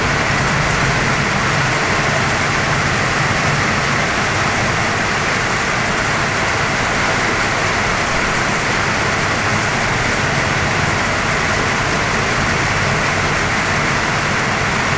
Luang Prabag, Sisavong Road, rain
Rain at the Ancient Hotel.